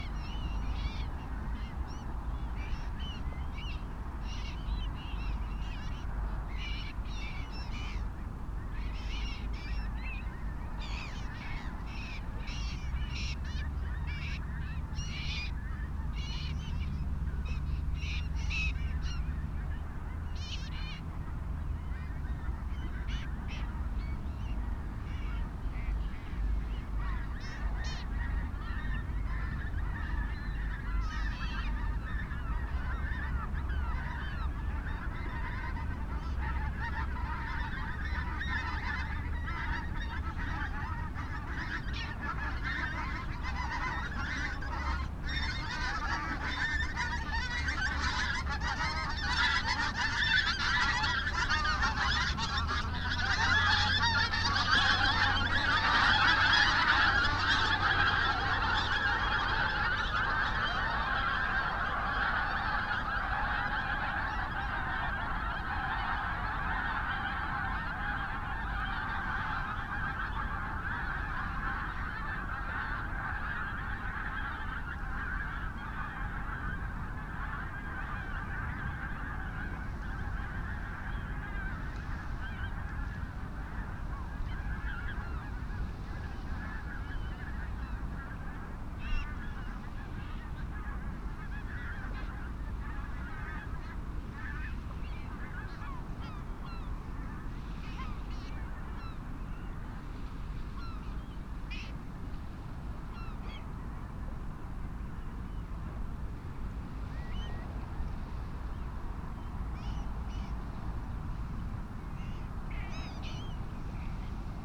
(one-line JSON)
{"title": "Budle Cottages, Bamburgh, UK - pink-footed geese ... calls and whiffling ...", "date": "2019-11-02 16:16:00", "description": "pink-footed geese ... calls and whiffling ... SASS ... skein coming over ... whifffling is when they lose height rapidly by dispelling the air from their wings ... some times they will even fly upside down keeping their head still ... bird calls ... black-headed gulls ... background noise ...", "latitude": "55.61", "longitude": "-1.76", "altitude": "3", "timezone": "Europe/London"}